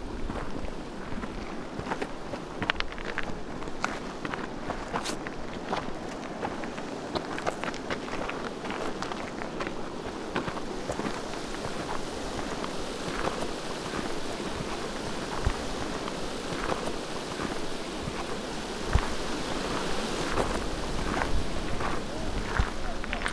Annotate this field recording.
Refuge de Peclet-Polset 2474 m, French Alps. Walking in the snow. Marche dnas la neige. Tech Note : Sony PCM-D50 internal microphones, wide position.